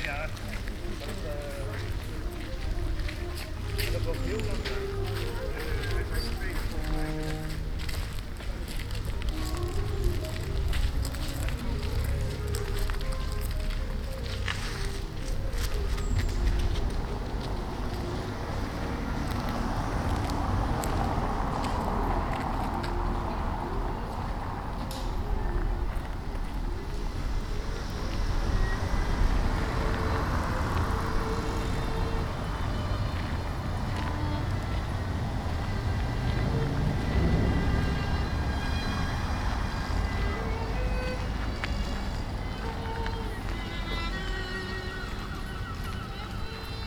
Voorhout, Centrum, Nederland - The Hague Sculpture 2012
The Hague Sculpture 2012 Lange Voorhout. Part of the 'Rainbow Nation' exhibition.
Some technical glitch forced me to do an unfortunate edit somewhere in the middle. But I like the atmosphere so much that I submit it anyway.
22 July 2012, 13:01